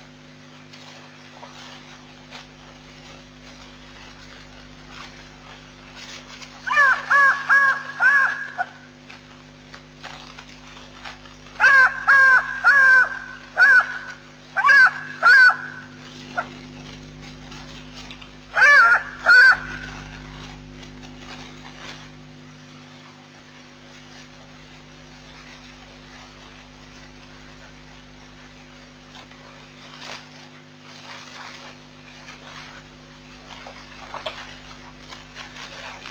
Jackal sounds at Nkorho Bush Lodge at night.